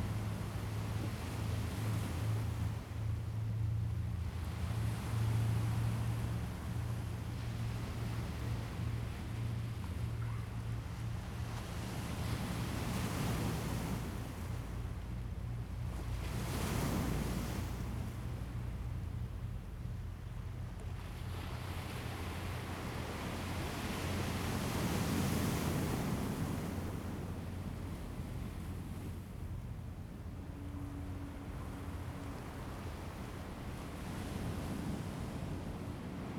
Sound of the waves, The distant sound of the yacht, Fighter flight through
Zoom H2n MS +XY
Taitung County, Taiwan